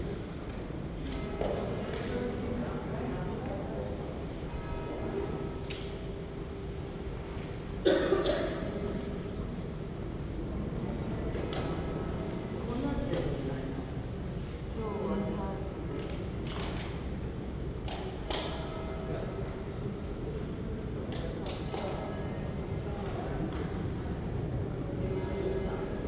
Westminster Abbey Cloisters - 2017-06-22 Westminster Abbey Cloisters
Sitting in the Cloisters with people walkig by. The bell in the background is calling worshipers to Holy Communion. Recorded on a Zoom H2n.
London, UK, 22 June 2017, 12:18